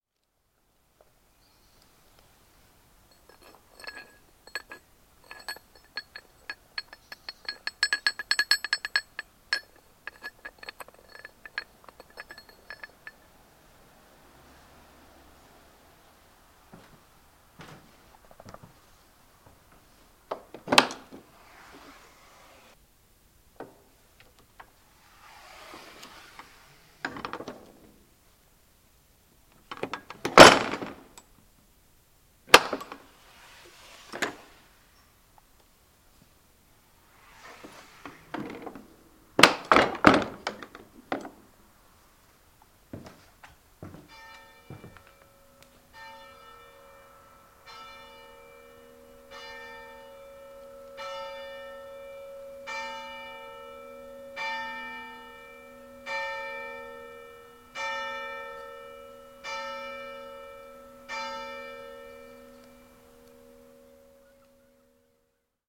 Portesham, Dorset, UK - church door and bells
opening of gate, church door and bells ringing
19 October 2013, 11:00